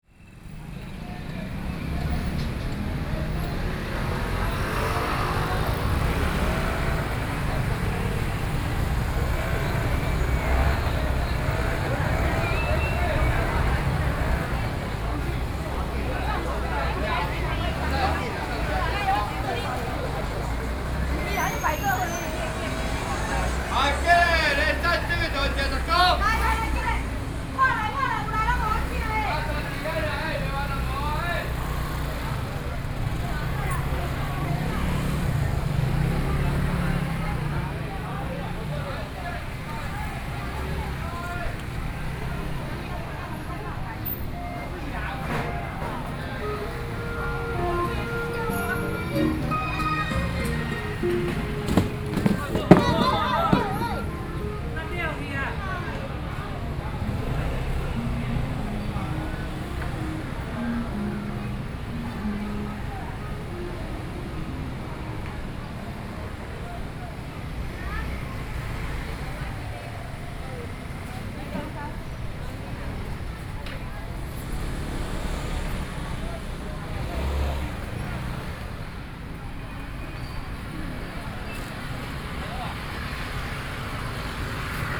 Zhongzheng St., 羅東鎮仁和里 - traditional market
Traffic Sound, walking in the traditional market
Sony PCM D50+ Soundman OKM II
Yilan County, Taiwan, 27 July 2014, 10:33